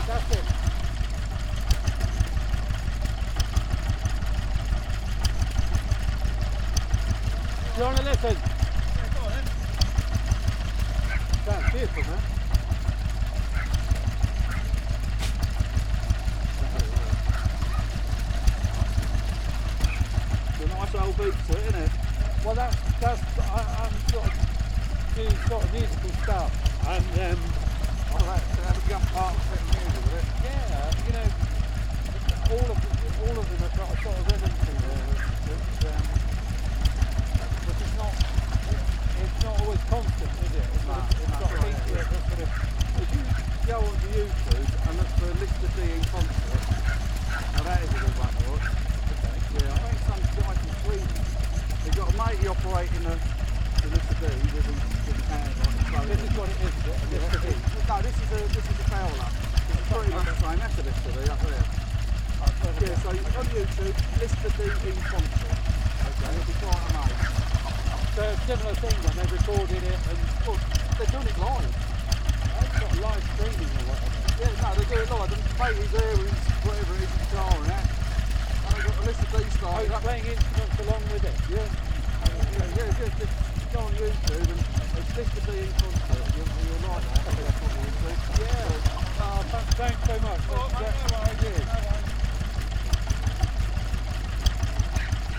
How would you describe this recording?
An old petrol-driven water pump. Sony M10 homemade primo array.